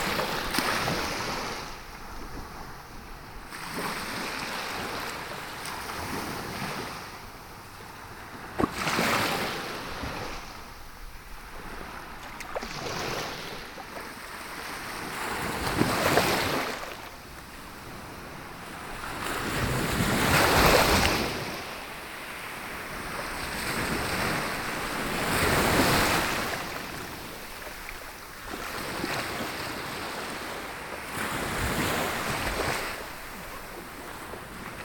San Juan Playa, Alicante, Spain - (04 BI) San Juan Beach
Binaural recording of waves at San Juan Beach.
Recorded with Soundman OKM on Zoom H2n
Comunitat Valenciana, España